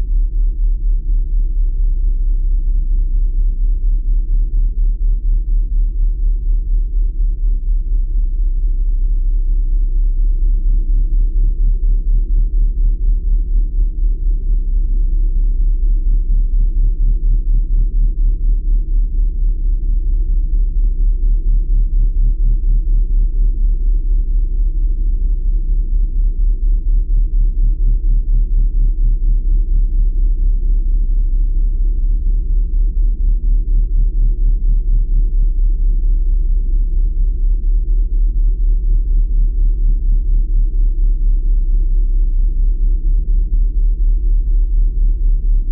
{"title": "Sruth na Maoile, UK - Ferry Engine: Belfast to Scotland", "date": "2016-02-25 12:50:00", "description": "Recorded with a pair of JrF contact mics and a Marantz PMD661.", "latitude": "54.80", "longitude": "-5.47", "timezone": "Europe/London"}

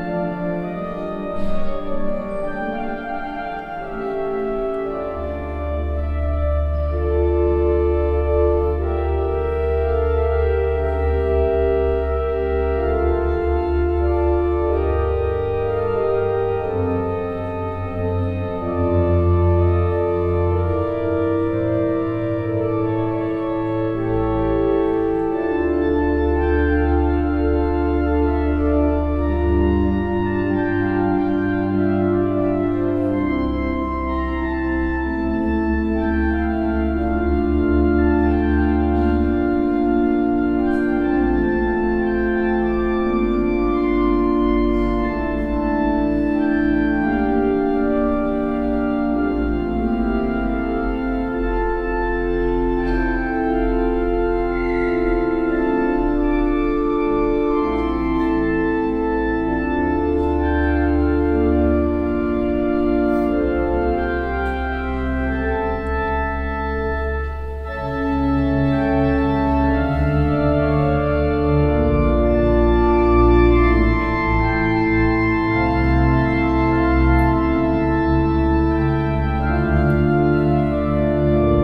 {"title": "wiltz, st.petrus church, mass", "date": "2011-08-09 12:41:00", "description": "Inside the church at a mass. The sound of the outside church bells, the organ play and the singing of the catholic community.\ninternational village scapes - topographic field recordings and social ambiences", "latitude": "49.97", "longitude": "5.93", "altitude": "318", "timezone": "Europe/Luxembourg"}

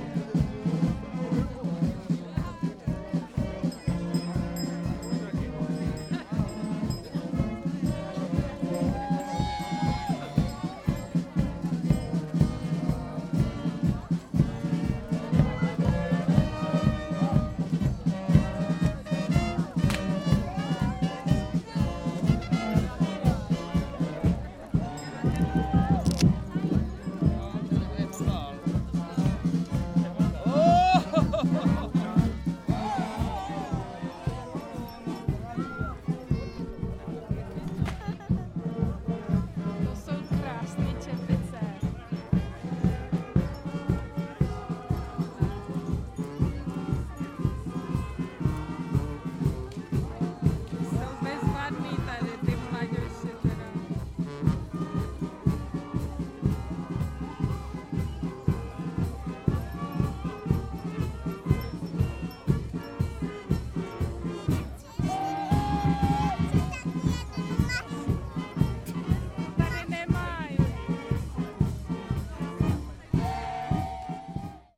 {"title": "Studnice, Czech Republic - carnival at studnice", "date": "2012-02-18 15:05:00", "description": "field recording of the masopust/fasching/carnival/vostatky celebration at Studnice. The unique masks are listed by Unesco as a cultural heritage.Masks and musicans walking from house to house to perform the traditional dance, being hosted by snacks and alcohol.", "latitude": "49.74", "longitude": "15.90", "altitude": "631", "timezone": "Europe/Prague"}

